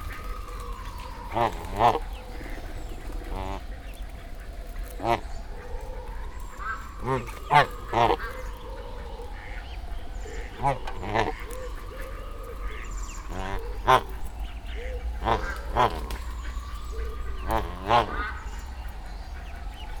Brussels, Parc Pierre Paulus, Ducks Goose and Jar.
Brussels, Parc Pierre Paulus, Canards, oies et Jar.
17 May 2011, 09:56